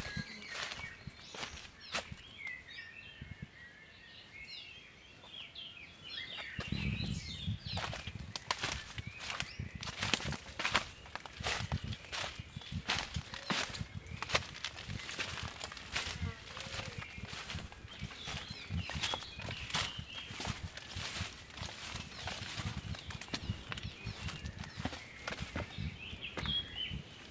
Limeira, Portugal - Walking along "Canada das Chicharras"